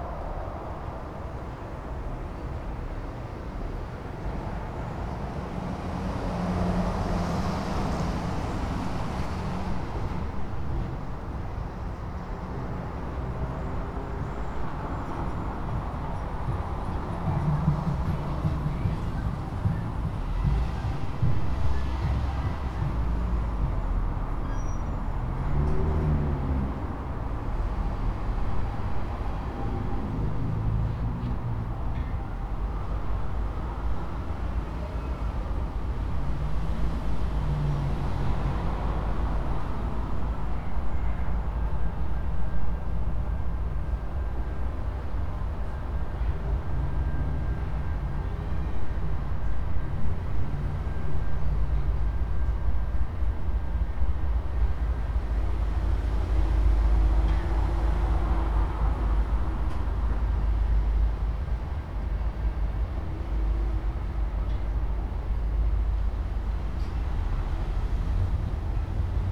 Spring St SE, Smyrna, GA, USA - Recording In A Gazebo
A recording of Smyrna Market Village as heard from under a gazebo. There are lots of traffic sounds around this area, but you can also hear some sounds coming from nearby shops.